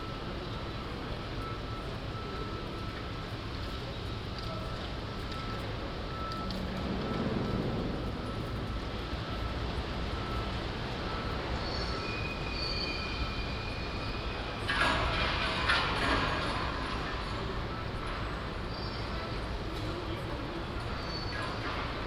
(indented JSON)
{
  "title": "Turfmarkt, Den Haag, Nederland - Construction work",
  "date": "2015-03-04 16:30:00",
  "description": "Pedestrians and other traffic around a big building excavation at the Turfmarkt, Den Haag.\nBinaural recording. Zoom H2 with SP-TFB-2 binaural microphones.",
  "latitude": "52.08",
  "longitude": "4.32",
  "altitude": "13",
  "timezone": "Europe/Amsterdam"
}